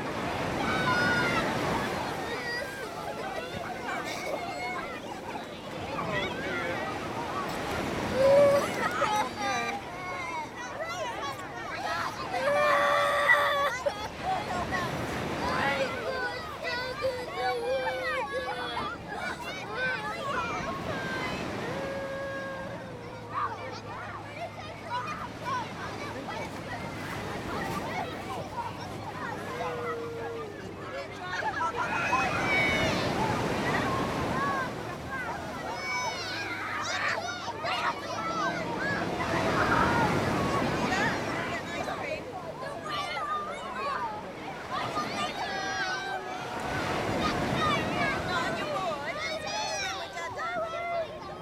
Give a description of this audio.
sat on a beach - recorded on Burton Bradstock beach in Dorset. Near to waves crashing onto beach with kids screaming and laughing, and a little tears.